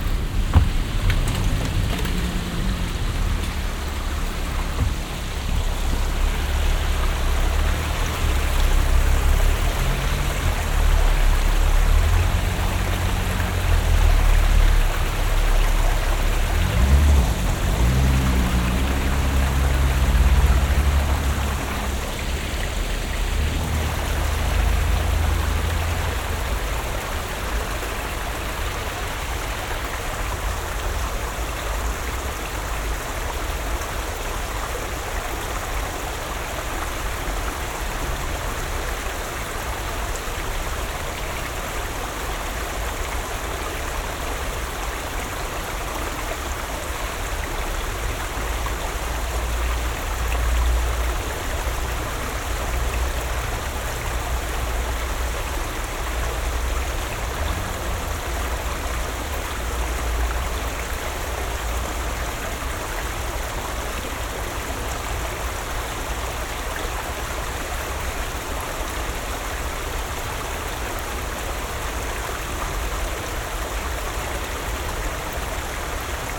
refrath, im letsch, holzbrücke, kleiner bach
abfahrt eines pkw, das plätschern des abfliessenden baches unter der brücke, morgendliche vögel udn mückenschwärme im sonnenlicht
soundmap nrw - social ambiences - sound in public spaces - in & outdoor nearfield recordings11:24